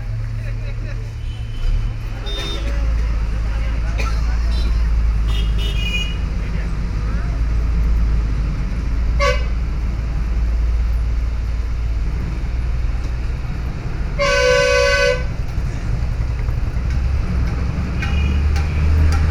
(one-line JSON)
{"title": "Dharwad, Old bus station, Bus departure", "date": "2009-10-20 14:09:00", "latitude": "15.46", "longitude": "75.01", "altitude": "720", "timezone": "Asia/Kolkata"}